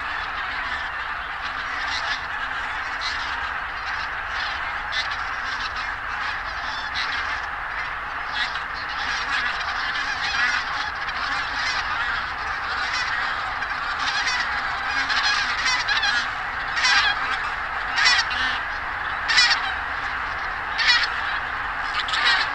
Gülper See, Germany - Abertausende Zugvögel
1000 zugvögel, gänse und kraniche, sammeln sich am gülper um anfang november nach süden/westen zu ziehen / thousands of cranes and geese (goose) meeting at a lake in late autumn / migliaia di gru e oche si raggruppano a un lago in autunno
Havelaue, Germany, 20 October 2012